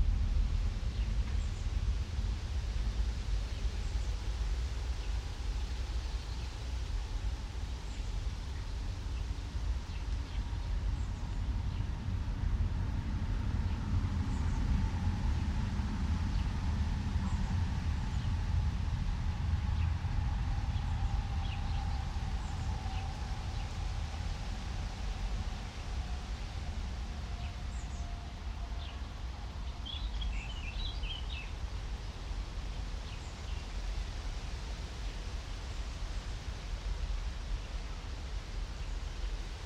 The nightingale's perspective, The Wet Triangle, Brehmestraße, Berlin, Germany - Windy afternoon, soft poplar leaves and a very heavy train
The lazy end of the afternoon, warmish and breezy. A single sparrow chirps, there a snatches of lesser whitethroat and blackcap, but most birds are quiet. A very heavy train passes.